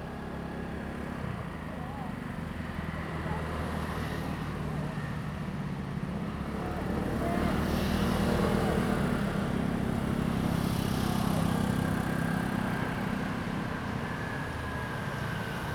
厚石群礁, Liuqiu Township - the waves and Traffic Sound
Sound of the waves, Traffic Sound
Zoom H2n MS+XY